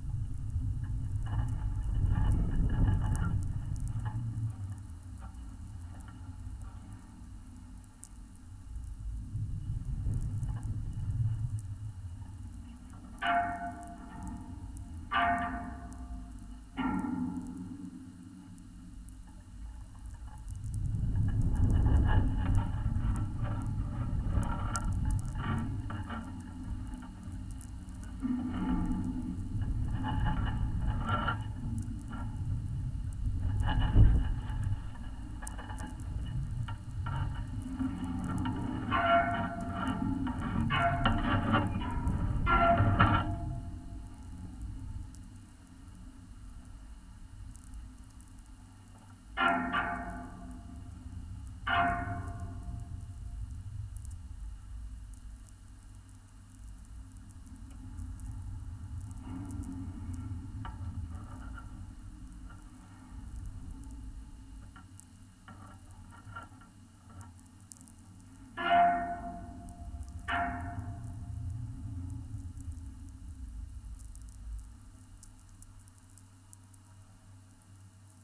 Utena, Lithuania, sound study of watertower
examination of aural aspect of abandoned watertower. contact mics and diy electromagnetic antenna